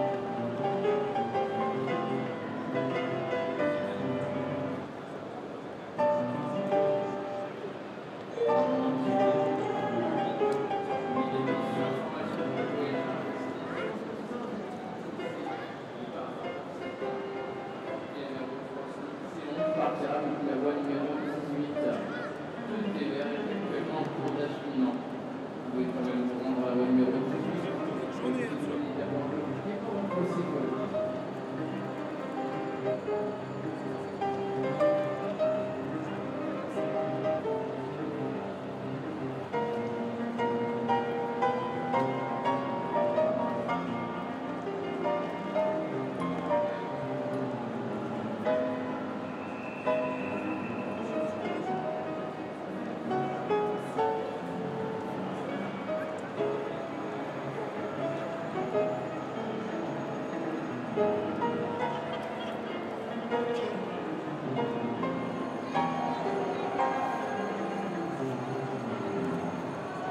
Rue de Dunkerque, Paris, France - Gare du Nord - Ambiance musicale
Gare du Nord
Ambiance musicale
Prse de sons : JF CAVRO
ZOOM F3 + AudioTechnica BP 4025